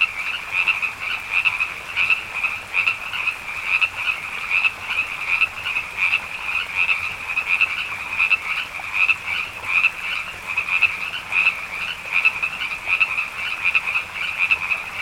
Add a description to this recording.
Quiet evening in the Hollywood Hills... aside from the frogs. Tried a few different mic techniques. Binaural turned out the best.